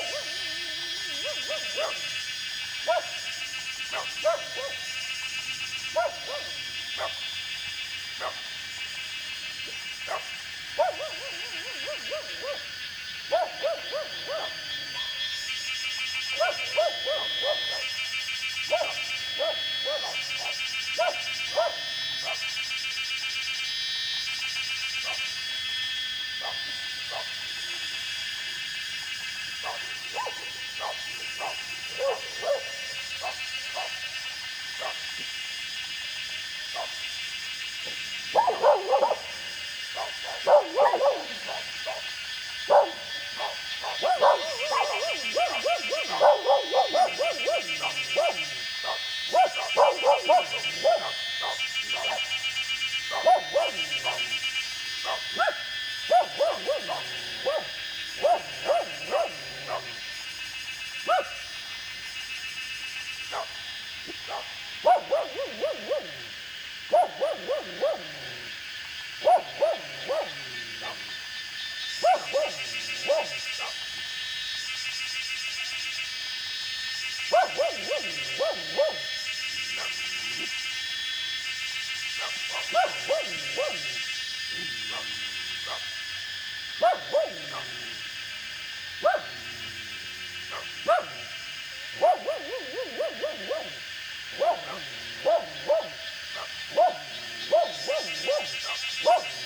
Cicada sounds, Dogs barking
Zoom H2n MS+XY